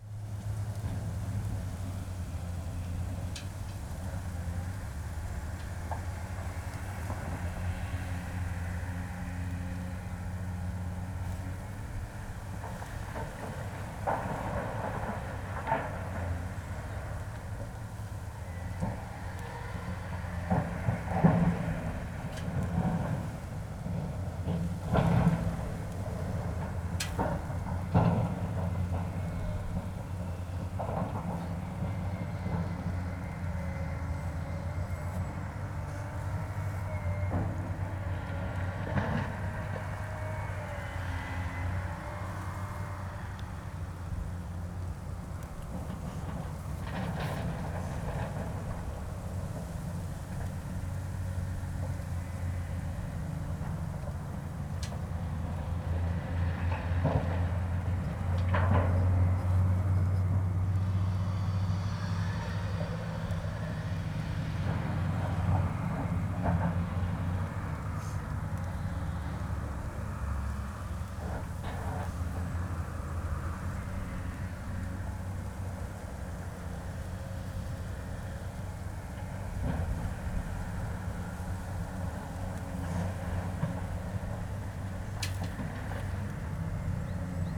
Beselich Niedertiefenbach - at the edge of a limestone quarry
ambience near limestone quarry (impatient little girl waiting for the recordist to finish)
(Sony PCM D50, DPA4060)